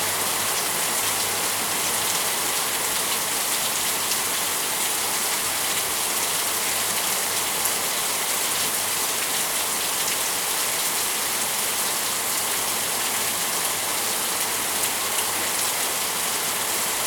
Broads Rd, Lusaka, Zambia - Lusaka heavy rains....
soundscapes of the rainy season...
Lusaka Province, Zambia, 9 December